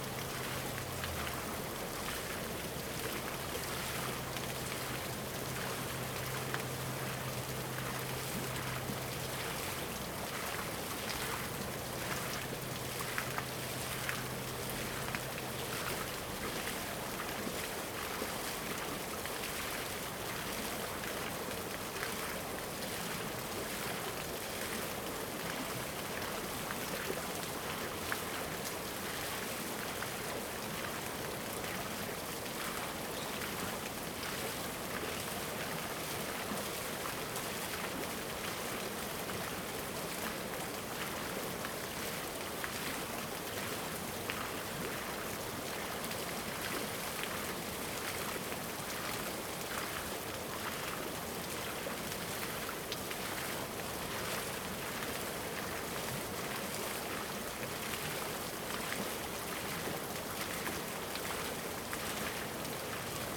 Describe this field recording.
Stream of sound, Cicadas sound, Waterwheel, Hot weather, Zoom H2n MS+XY